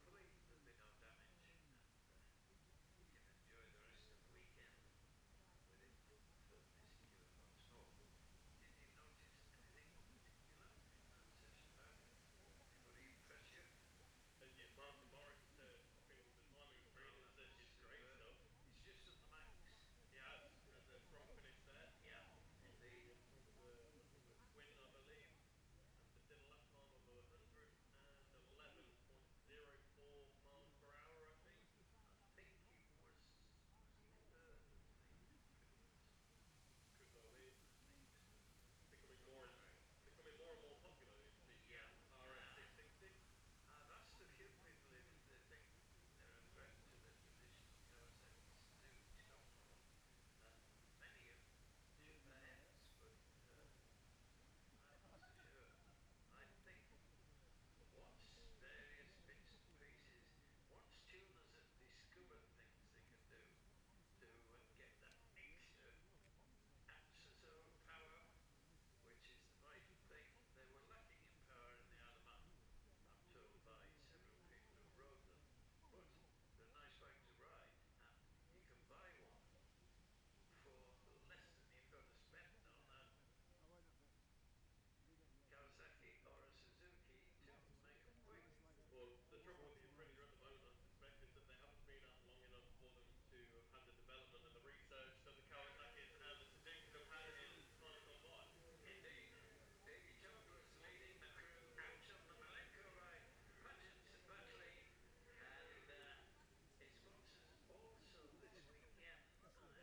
Jacksons Ln, Scarborough, UK - gold cup 2022 ... 600s practice ...
the steve henshaw gold cup 2022 ... 600s practice group one then group two ... dpa 4060s clipped to bag to zoom h5 ...